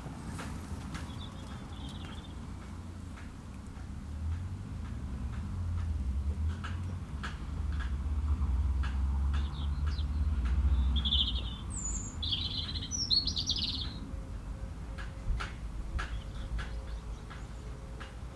Clooncoul, Co. Clare, Ireland - binaural recording demonstration, Co. Clare, Ireland
demonstration binaural recording method